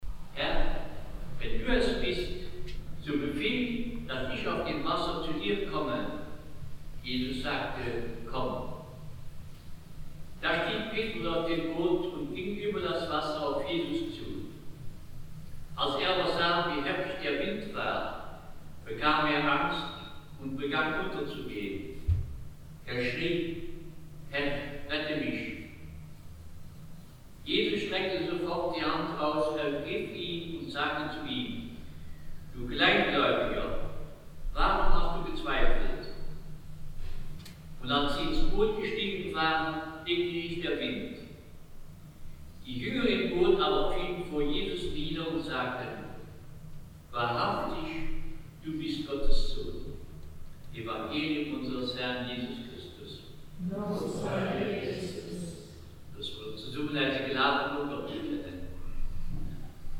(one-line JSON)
{"title": "michelau, church, mass", "date": "2011-08-10 16:06:00", "description": "Inside the church foyer during the sunday morning mass. The Vater unser Prayer.\nMichelau, Kirche, Messe\nIm Kirchenvorraum während der Sonntagsmesse. Das Vater-Unser-Gebet.\nMichelau, église, messe\nA l’intérieur du foyer de l’église durant l’office le dimanche matin. Le Notre-Père.", "latitude": "49.90", "longitude": "6.09", "altitude": "225", "timezone": "Europe/Luxembourg"}